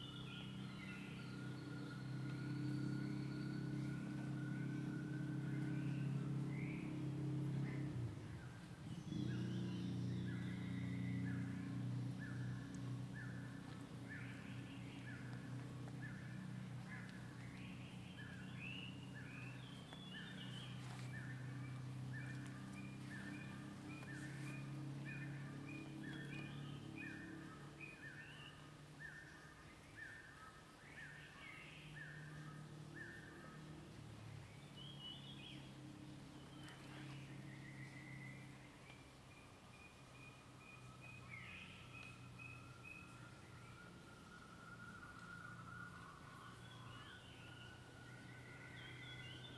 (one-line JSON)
{"title": "水上巷, TaoMi Li, Puli Township - Bird sounds", "date": "2016-03-26 06:55:00", "description": "Bird sounds\nZoom H2n MS+XY", "latitude": "23.94", "longitude": "120.92", "altitude": "525", "timezone": "Asia/Taipei"}